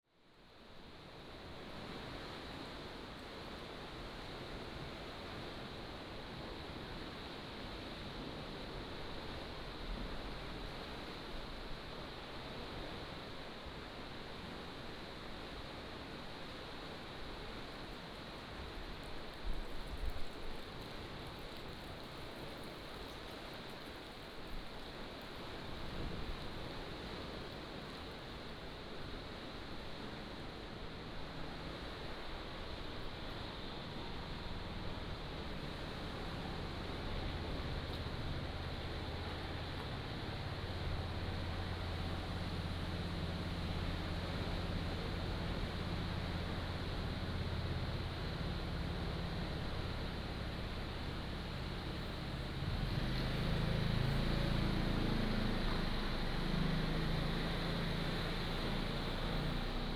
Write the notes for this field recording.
Inside the cave, Sound of the waves, Aboriginal rally venue